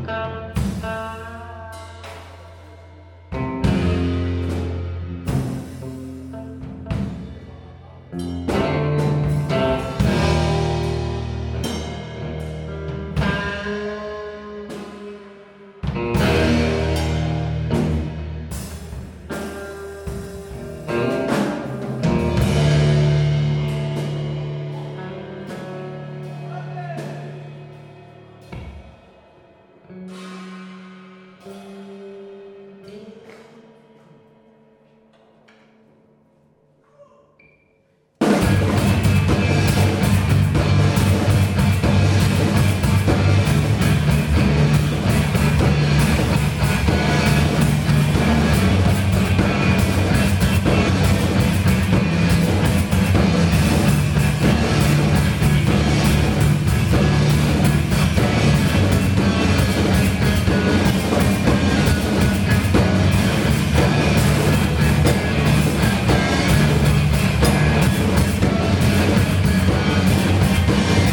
John Makay playing in Improbable concert Place Lorette / Marseille
concert by the duo guitar/drum John Makay _ organised by Limprobable in my working place.
Marseilles, France, 29 January